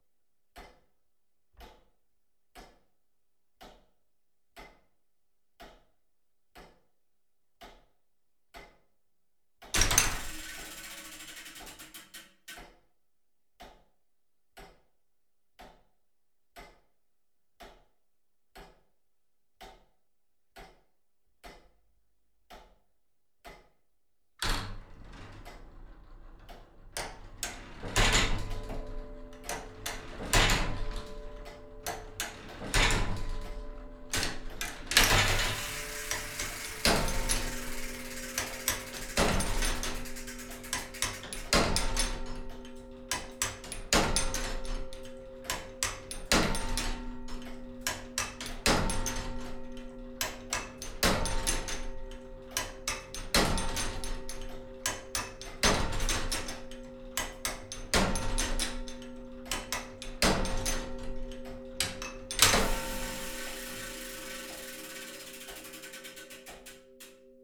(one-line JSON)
{"title": "SBG, Iglesia - Reloj a medianoche", "date": "2011-08-28", "description": "El mecanismo del reloj de la iglesia de Sant Bartomeu, a las doce de la noche. En las horas en punto las campanas resuenan dos veces, primero se tocan los cuatro cuartos y la hora; unos instantes después se toca la hora de nuevo, con sus toques correspondientes.", "latitude": "41.98", "longitude": "2.17", "altitude": "867", "timezone": "Europe/Madrid"}